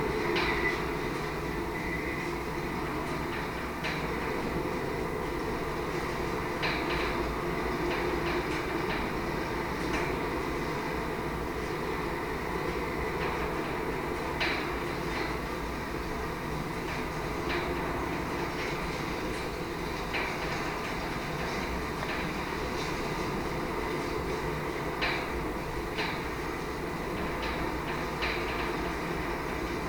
Cerro Sombrero, Región de Magallanes y de la Antártica Chilena, Chile - storm log - antenna II, fence
hilltop atenna II, contact mic on fence, wind force SW 31 km/h
Cerro Sombrero was founded in 1958 as a residential and services centre for the national Petroleum Company (ENAP) in Tierra del Fuego.
March 2019